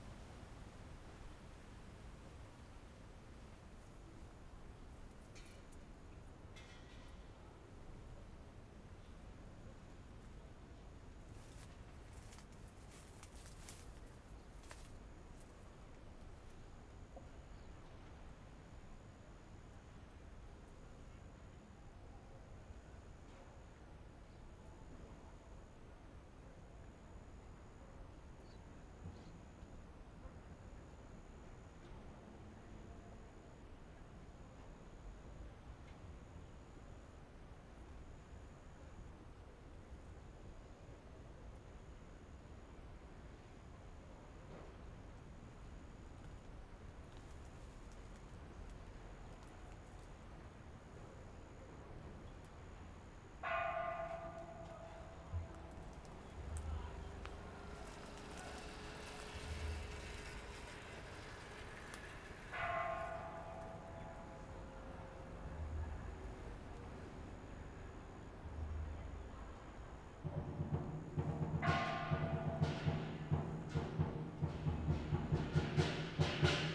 芳苑鄉芳苑村, Changhua County - Next to the temple
Next to the temple, Firecrackers, Traditional temple festivals
Zoom H6 MS
8 March, 13:51